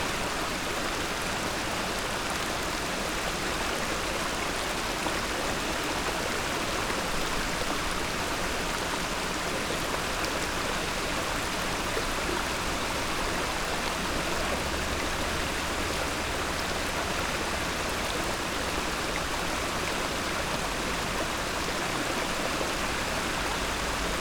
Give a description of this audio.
waterflow under the stony bridge